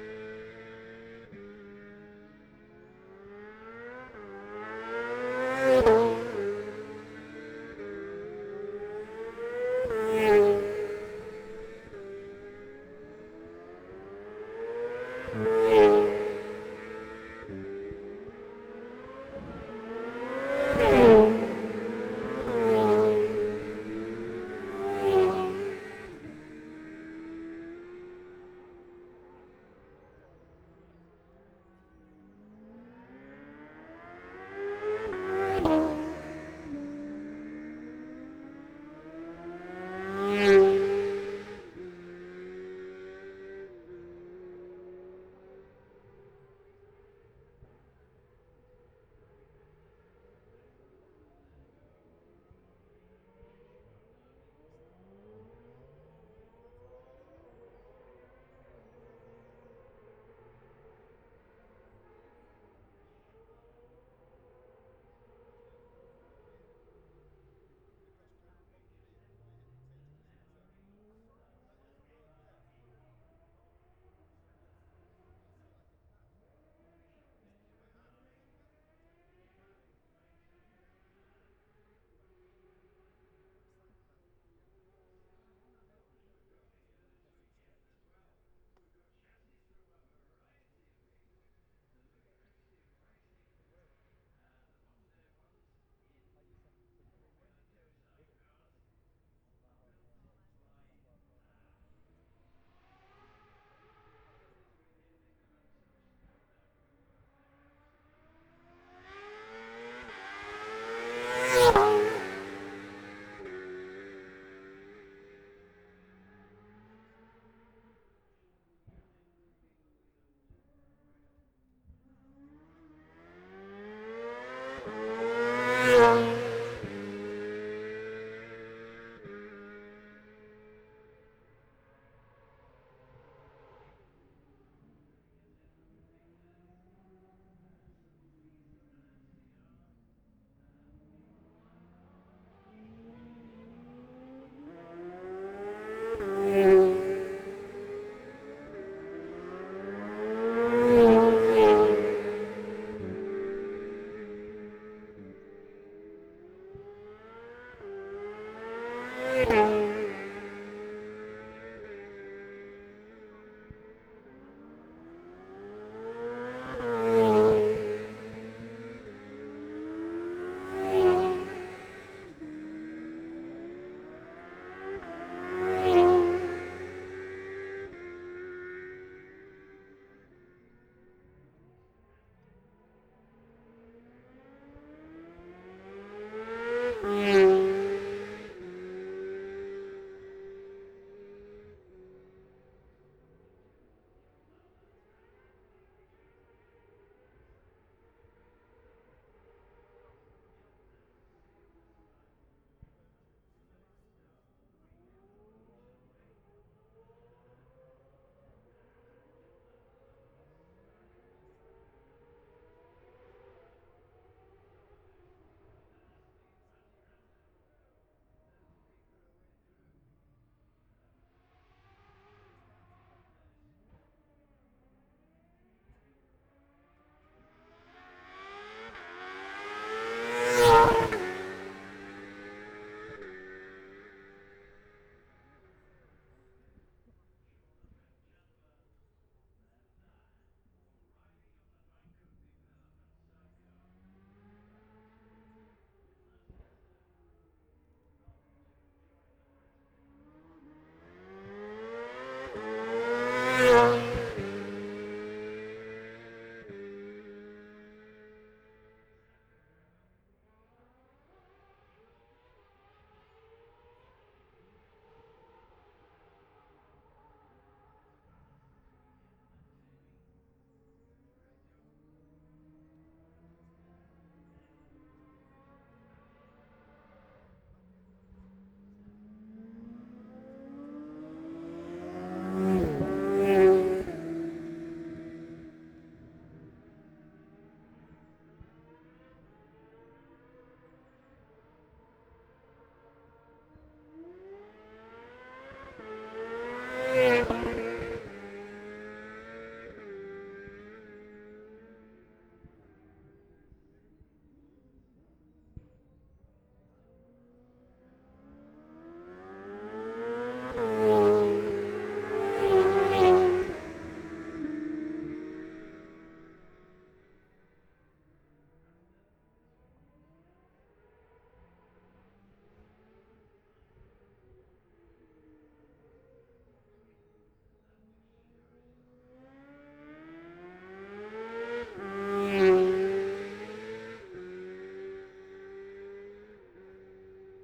Jacksons Ln, Scarborough, UK - olivers mount road racing 2021 ...
bob smith spring cup ... F2 sidecars practice ... luhd pm-01 mics to zoom h5 ...